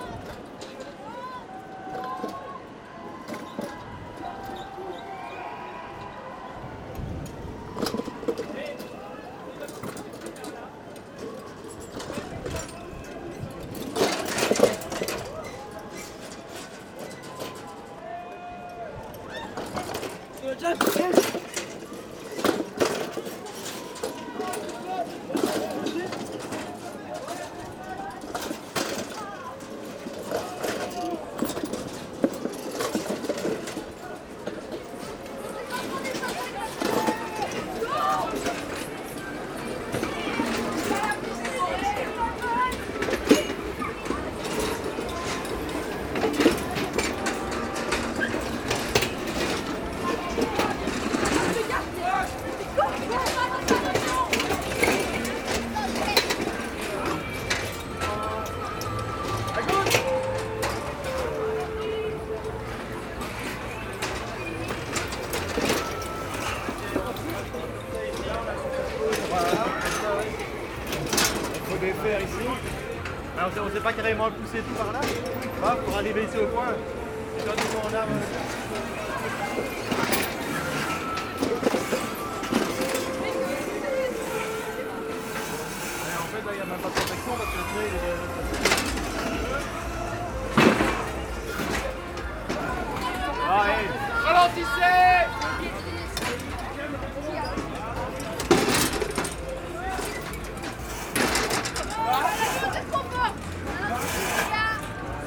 I asked myself during the race : how to explain with sound this is here an enormous four wheels race ? Not easy... I took the idea to record the wheels, jumping a small metallic gutter. That's probably the best way... So its wheels wheels wheels wheels ... and at the end, a small accident.
Mons, Belgium